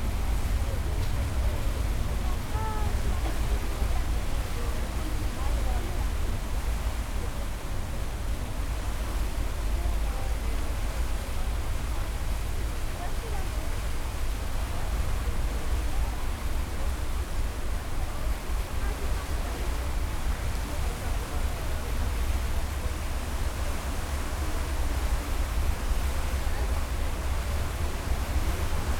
Soundwalk: Boarding on the Staten Island Ferry.